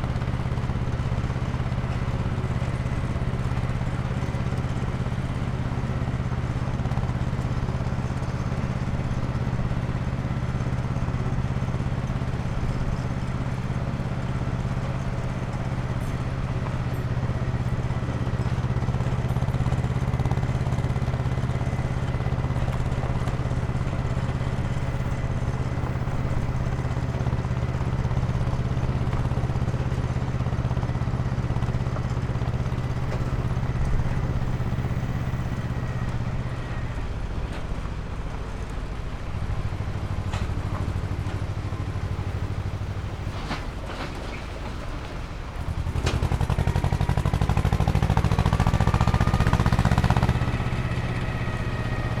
driving closely behind an old Polish Ursus tractor on a dirt road. The tractor was pulling a big trailer full of wood, chugging arduously towards its destination.
Machowino, Poland